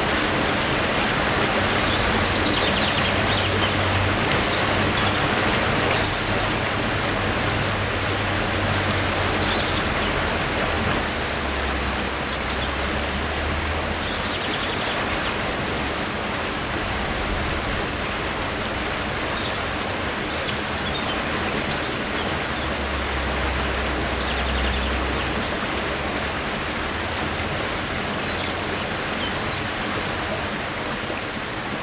ratingen, scharzbachtal, hackenbergweg, brücke
aufnhame morgens, auf brücke über kleinen lebhaften bach
- soundmap nrw
project: social ambiences/ listen to the people - in & outdoor nearfield recordings